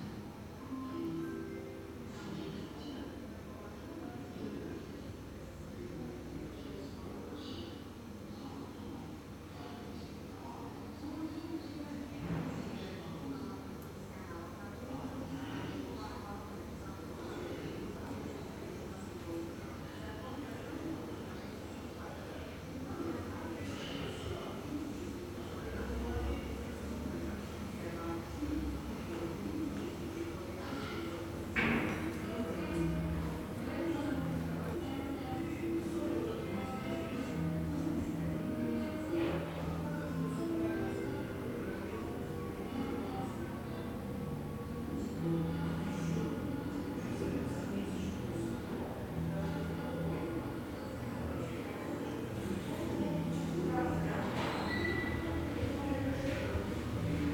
Vorkouta, République des Komis, Russie - Hotel Megapolis